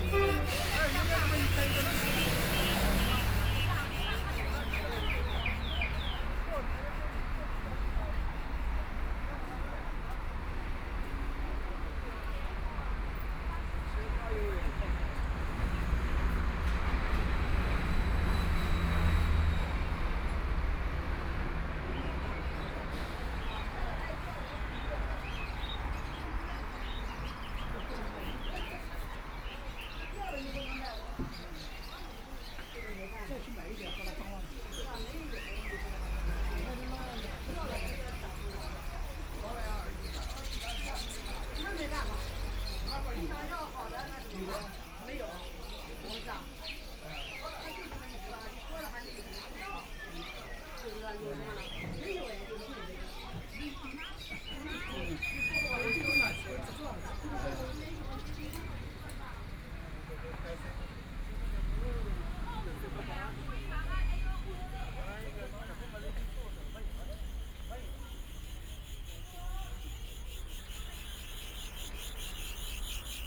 South Xizang Road, Shanghai - Bird and flower market
walk in the Bird and flower market, Binaural recording, Zoom H6+ Soundman OKM II
Huangpu, Shanghai, China, 3 December 2013, 13:33